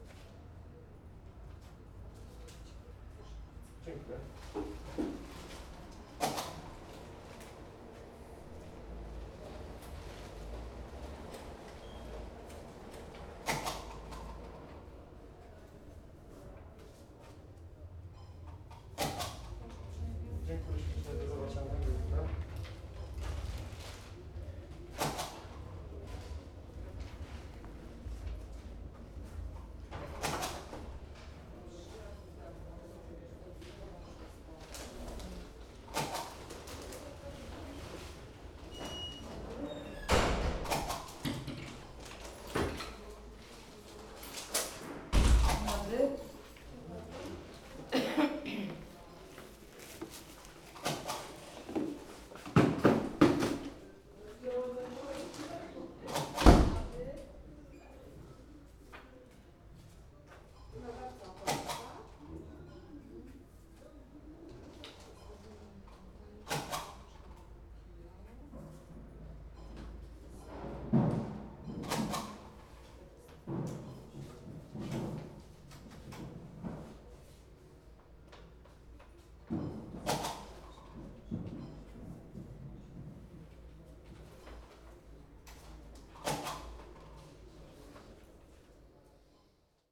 Poznan, Lazarz district, main post office - waiting room of a customs office
a few business customers clearing customs and picking up their parcels. clerk stamping documents. very intriguing sounds of scanning/sorting machinery and conversations coming from a room with no access behind a wall.